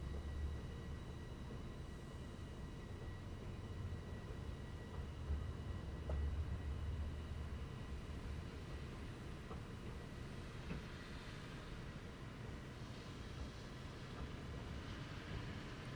Yonge St, Toronto, ON, Canada - Yonge street crossing / stranded train
Yonge street crossing with two stranded trains.
Uši Pro + ZoomH6